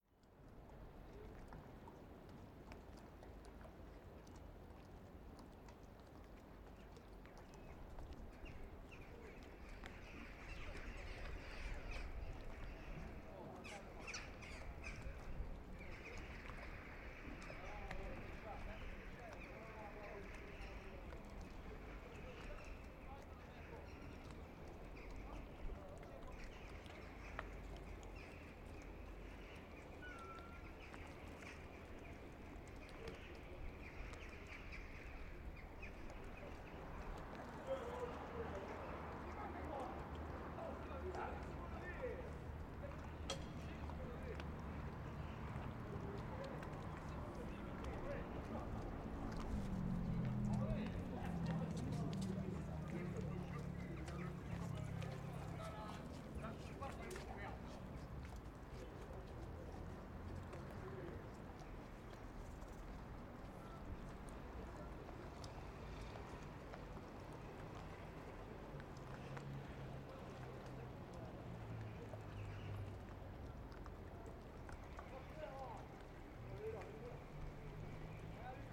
Harbor at twilight with fishermen birds and boats. ORTF with Oktava Mics.
By Jérome NOIROT & Clément Lemariey - SATIS Dpt University of Provence
16 March 2012, 19:00